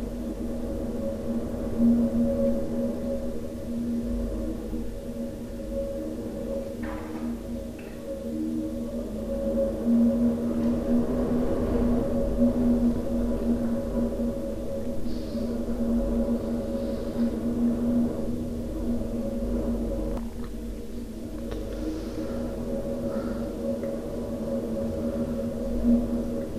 selva, calle de ses escoles, wind in the cellar
morning wind in the cellar of a house
soundmap international: social ambiences/ listen to the people in & outdoor topographic field recordings
7 August 2009, ~17:00